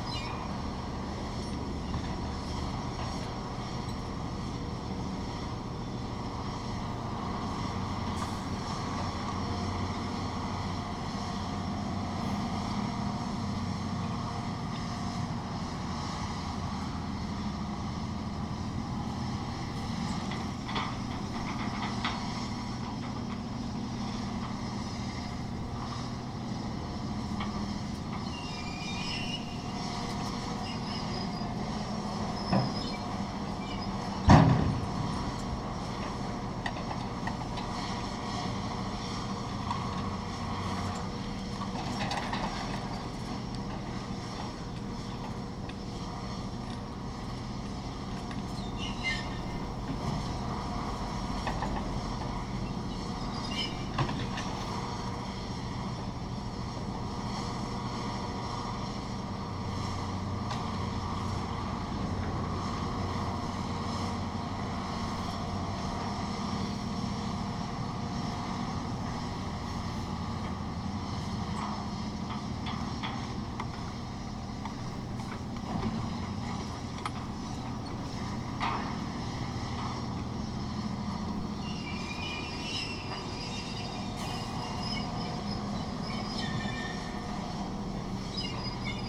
workum, hylperdijk: canal bank - the city, the country & me: excavator unloads a sand barge
excavator unloads a sand barge
the city, the country & me: june 22, 2015
22 June 2015, 13:27